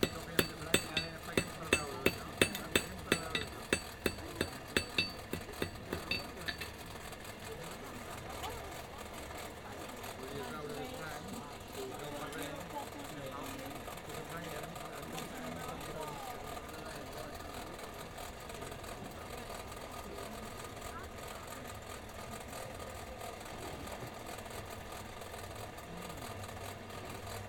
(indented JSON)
{
  "title": "vianden, castle, blacksmith",
  "date": "2011-08-09 21:18:00",
  "description": "A blacksmith working with traditional tools and an open fire, demonstrating traditional handcraft skills surrounded by Visitors.\nVianden, Schloss, Schmied\nEin Schmied arbeitet mit traditionellen Werkzeugen und einem offenen Feuer, er demonstriert traditionelle Handwerkskunst, umringt von Zuschauern.\nVianden, château, forgeron\nUn forgeron travaillant avec des outils traditionnels sur un feu ouvert, présentant l’art traditionnel de son métier aux visiteurs qui l’entourent.\nProject - Klangraum Our - topographic field recordings, sound objects and social ambiences",
  "latitude": "49.94",
  "longitude": "6.20",
  "timezone": "Europe/Luxembourg"
}